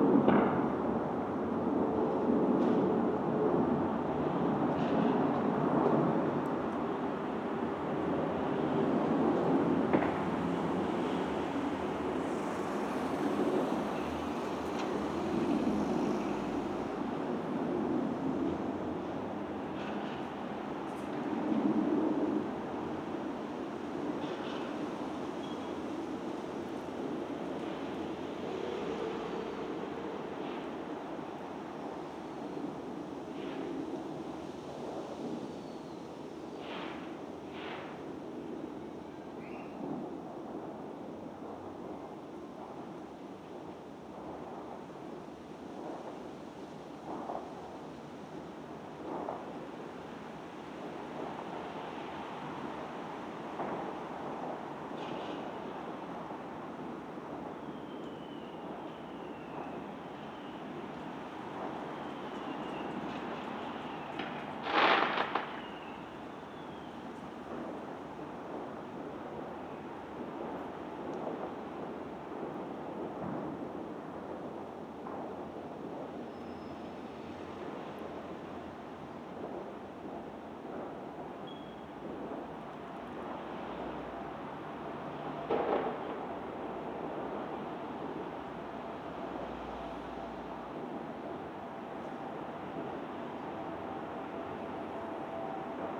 London, UK - Fireworks 05 November
Fireworks recording on 05 November around 19:30; recorded with Roland R44e + USI Pro.